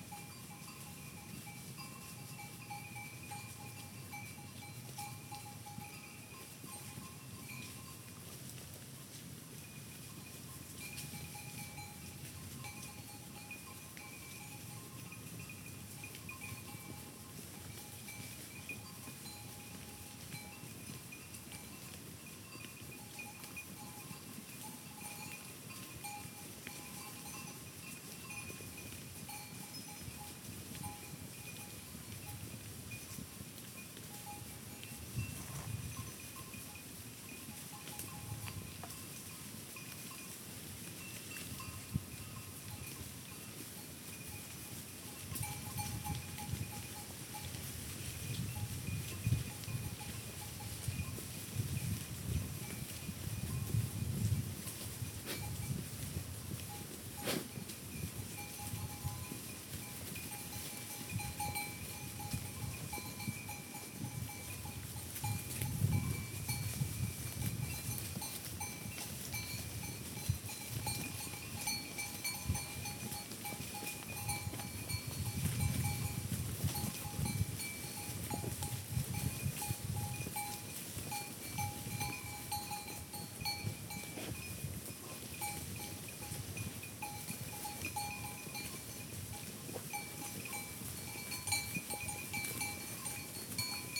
Platak, V. Pribenis, sheep
Sheep on field @ mountain region Platak 1100m above see level;
5 August, 13:00